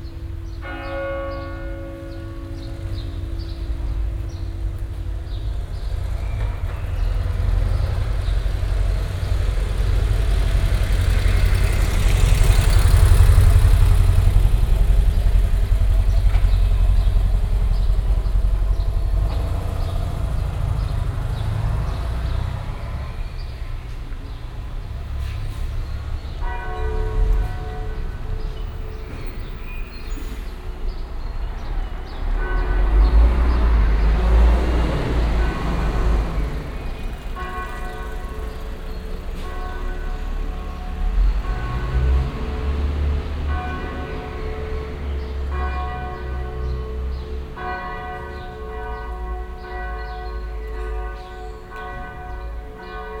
cologne, south, severinskloster, verkehr und tauben - koeln, sued, severinskloster, glocken
mittagsglocken von st. severin, schritte und radfahrer
soundmap nrw - social ambiences - sound in public spaces - in & outdoor nearfield recordings